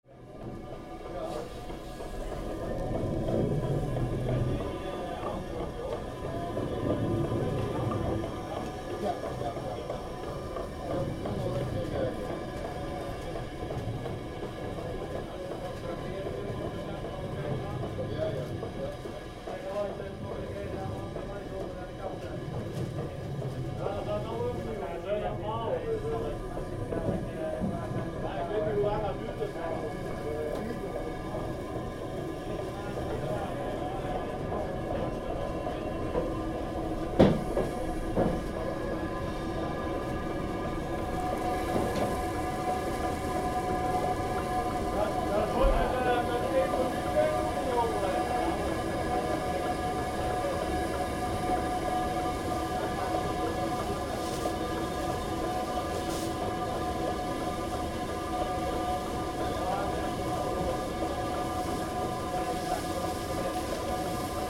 microphone under millstone in windmill milling wheat and rye flour.
Recording made for the project "Over de grens - de overkant" by BMB con. featuring Wineke van Muiswinkel.